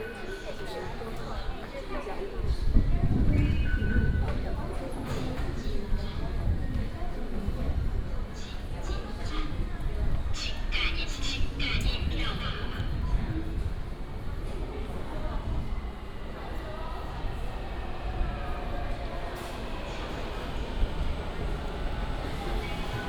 Sun Yat-sen Memorial Hall Station, Taipei City - walking into the MRT station
walking into the MRT station